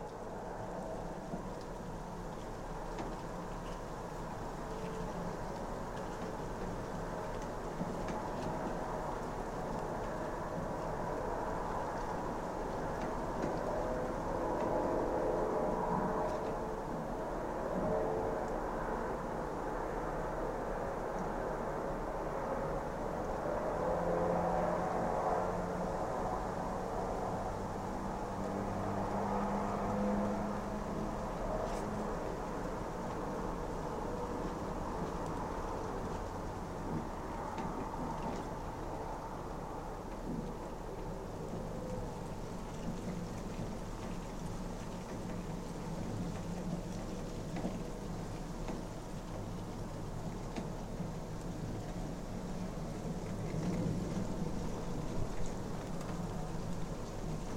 Vyžuonos, Lithuania, inside the rain pipe
Abandoned distillery. Microphones in the rain pipe.
Utenos apskritis, Lietuva, 2 November 2020